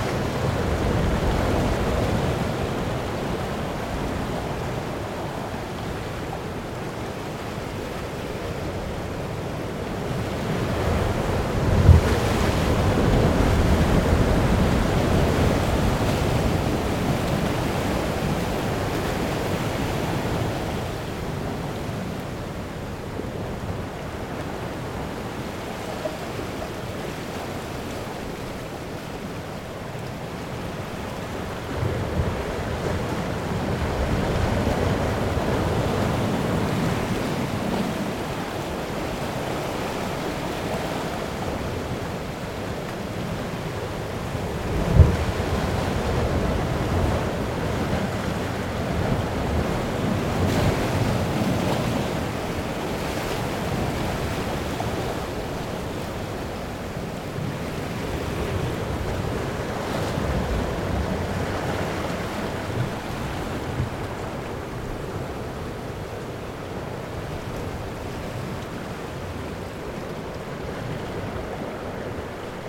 Île Renote, Trégastel, France - Waves crushing on a rock [Ile Renote ]
Marée montante. les vagues viennent s'écraser contre le flanc d'un rocher.
Rising tide. the waves crash against the side of a rock.
April 2019.
France métropolitaine, France